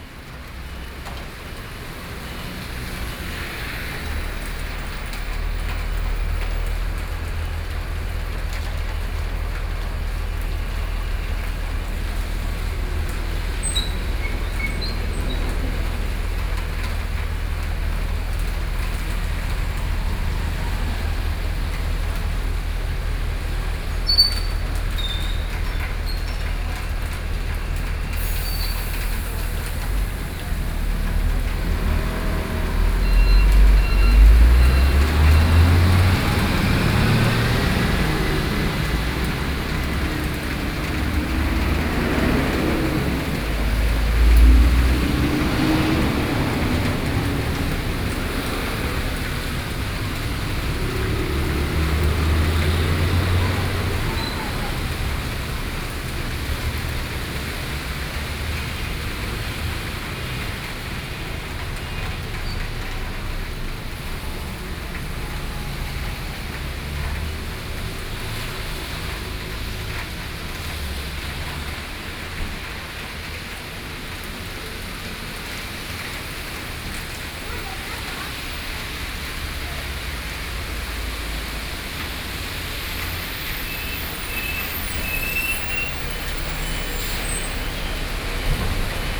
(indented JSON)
{
  "title": "Muzha District, Taipei - Rainy Day",
  "date": "2013-09-30 17:47:00",
  "description": "Rainy streets, Sony PCM D50 + Soundman OKM II",
  "latitude": "24.99",
  "longitude": "121.56",
  "altitude": "25",
  "timezone": "Asia/Taipei"
}